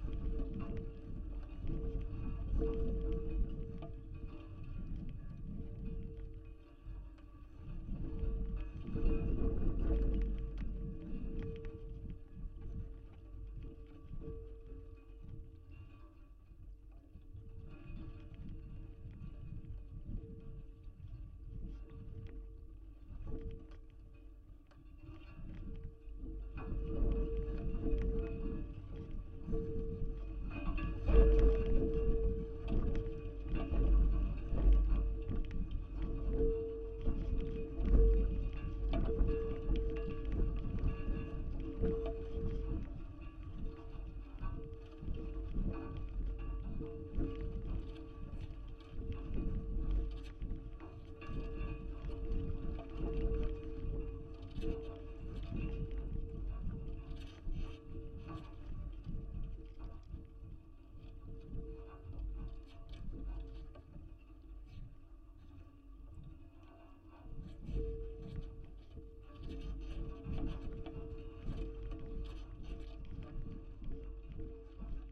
contact mic recording of a water trough on the South Dorset Ridgeway. SDRLP project supported by HLF and Dorset AONB.
Dorset, UK, August 2014